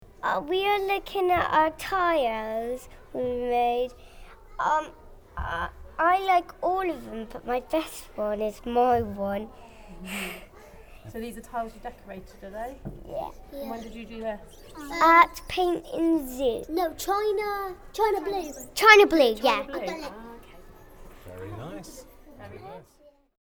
Tiles display with 3/4L